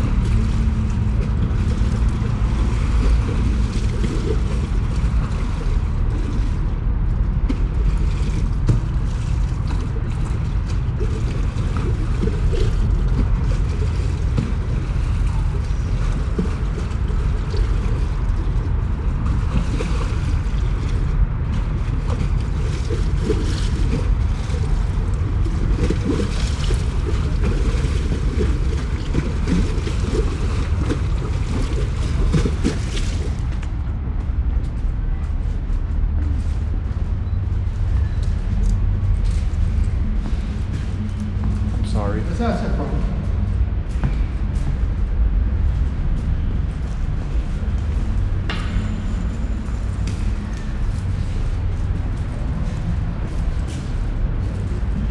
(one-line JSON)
{"title": "Lambeth, London, UK - Thames River Walk 1", "date": "2016-02-10 17:00:00", "description": "Recorded with a pair of DPA 4060s and a Marantz PMD661", "latitude": "51.50", "longitude": "-0.12", "altitude": "9", "timezone": "Europe/London"}